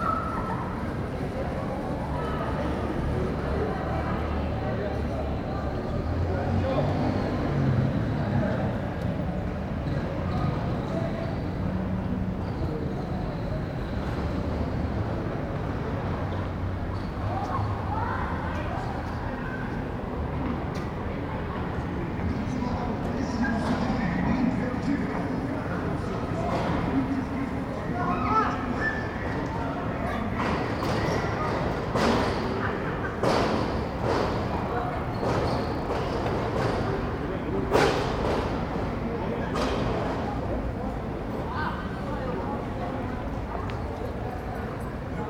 Calçadão de Londrina: Passagem de estudantes e comércio fechando - Passagem de estudantes e comércio fechando / Students passing and closing trade
Panorama sonoro: grupos de estudantes passava pelo Calçadão no fim de tarde, após o término das aulas, enquanto portas de aço das lojas estavam sendo fechadas. Um caminhão equipado com caixas de som passava por uma das ruas anunciando título de capitalização com grande intensidade.
Sound panorama: Groups of students passed the boardwalk without end of afternoon, after the end of classes, while steel doors of the stores where it is closed. An equipment truck with loudspeakers passed by one of the streets announcing capitalization title with great intensity.
- Centro, Londrina - PR, Brazil, 10 May 2017, 17:50